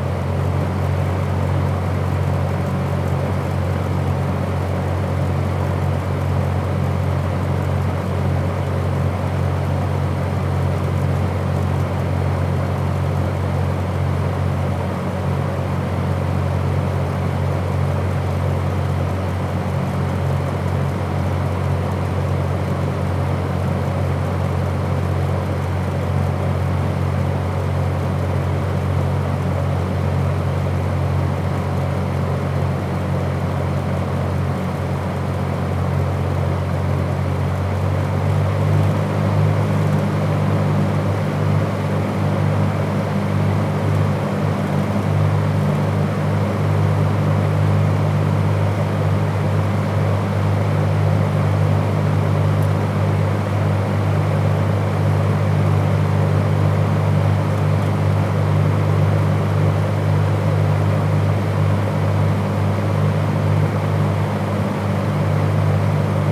Recordist: Ribbet Malone
Description: Outside the Marijos Church. Mostly ventilation noise. Recorded with ZOOM H2N Handy Recorder.
Nida, Lithuania, 27 July 2016